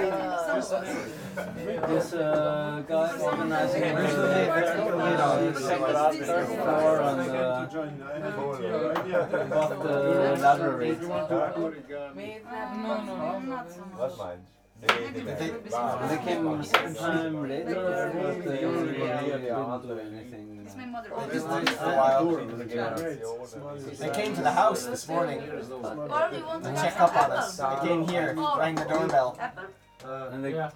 Maribor, Medvedova, Babica - the other night
a late gathering
23 November 2011, 02:50